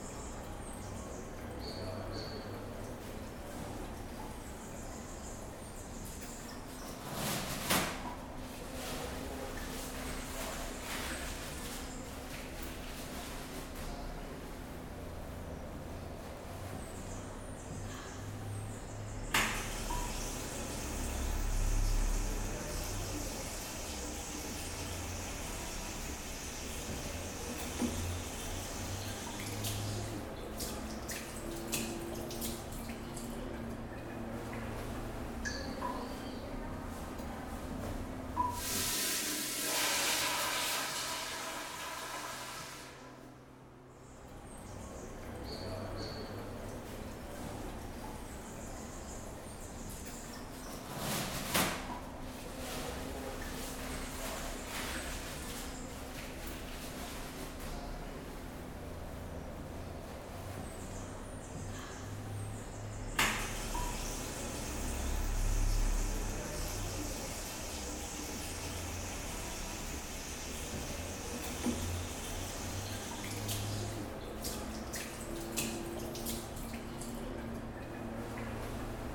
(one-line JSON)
{"title": "Cra., Medellín, Antioquia, Colombia - Baños posgrado, Universidad de Medellín", "date": "2021-09-23 13:20:00", "description": "Descripción\nSonido tónico: Baños bloque 12\nSeñal sonora: Llaves de agua, maquina de papel\nGrabado por Santiago Londoño Y Felipe San Martín", "latitude": "6.23", "longitude": "-75.61", "altitude": "1576", "timezone": "America/Bogota"}